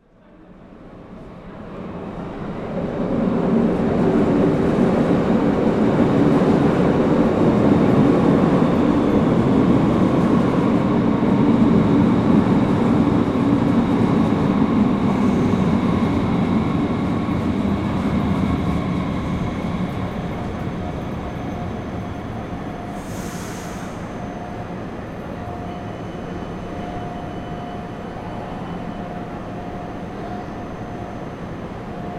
While a week ago there were constant anouncements (that I did not record unfortunately) to keep the distance because of the corona virus, at this wednesday this was totally absent. Recorded with Tascam DR-44-WL.